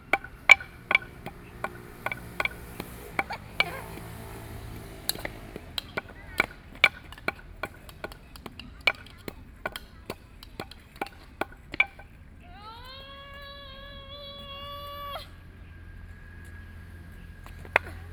Shin Shing Park, Taipei City - One Day
On July 18 this day, selected a small community park for 24 hours of sound recordings.
Recording mode to record every hour in the park under the environmental sounds about ten minutes to complete one day 24 (times) hours of recording, and then every hour of every ten minutes in length sound, picking them one minute, and finally stick connected 24 times recording sound data, the total length of time will be 24 minutes.Sony PCM D50 + Soundman OKM II, Best with Headphone( For 2013 World Listening Day)